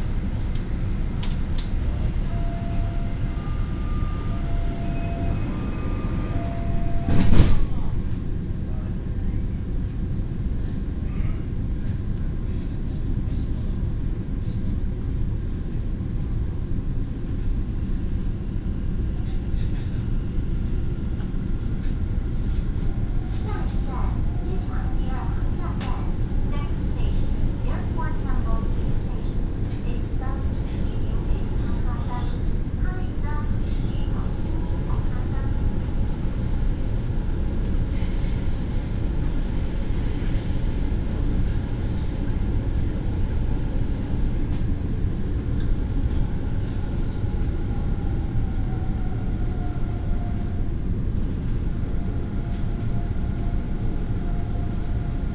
Taoyuan Airport MRT, Zhongli Dist. - In the MRT compartment
Station message broadcast, In the MRT compartment, Taoyuan Airport MRT, from Airport Hotel Station to Airport Terminal 2 Station